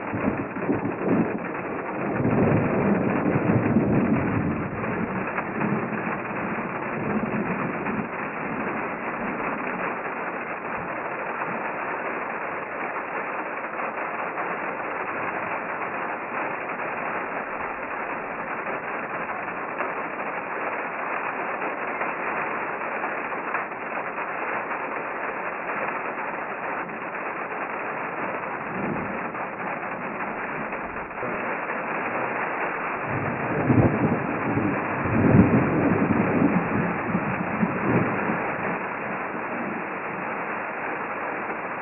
Wauchula, FL, USA - Passing Storms
Quiet morning
distant storm approaches
storm arrives
rain on metal roof
storm moves away